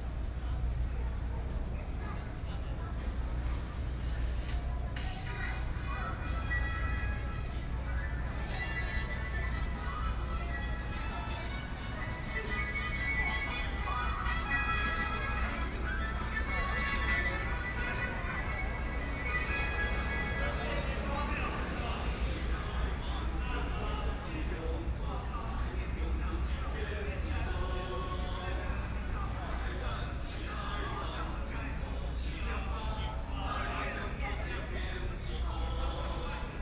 Qingdao E. Rd., Taipei City - Protest
Walking through the site in protest, People and students occupied the Legislature
Binaural recordings